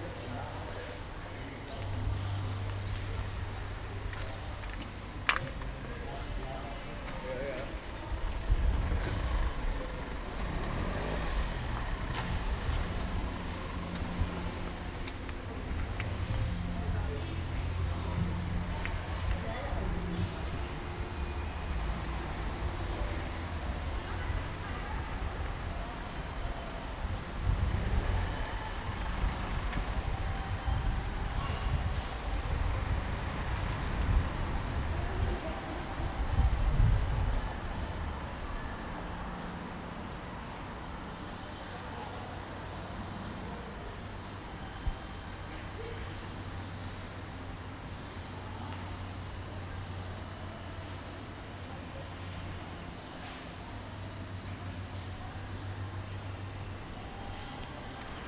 Max Josephstrasse, Mannheim - Kasimir Malewitsch walk, eight red rectangles
after the rain, praying